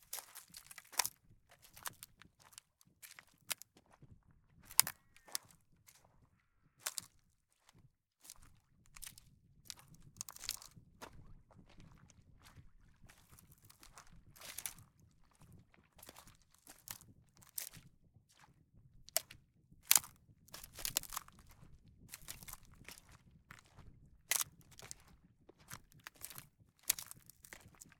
Aminteo, Greece - Ancient lake of petres